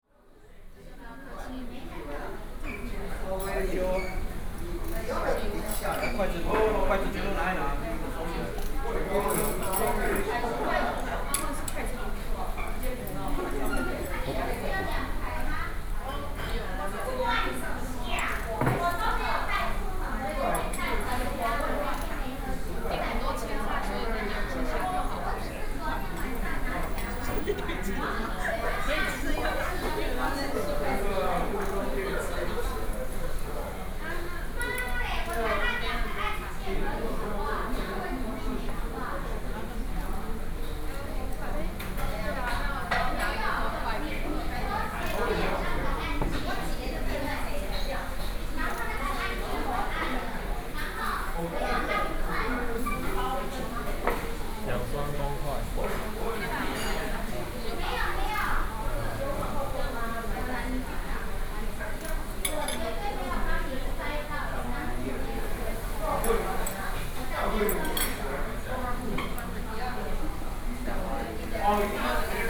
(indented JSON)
{
  "title": "Taipei, Taiwan - In the restaurant",
  "date": "2013-06-25 20:22:00",
  "description": "In the restaurant, Sony PCM D50 + Soundman OKM II",
  "latitude": "25.05",
  "longitude": "121.53",
  "altitude": "13",
  "timezone": "Asia/Taipei"
}